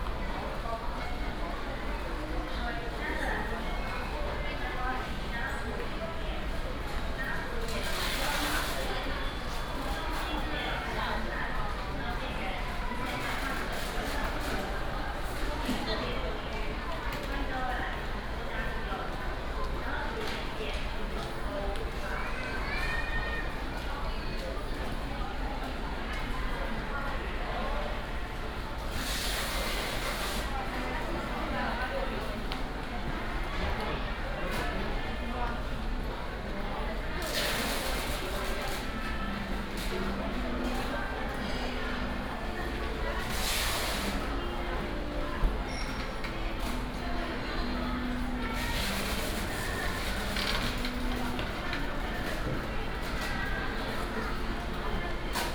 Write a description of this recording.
In the store checkout exit area, trolley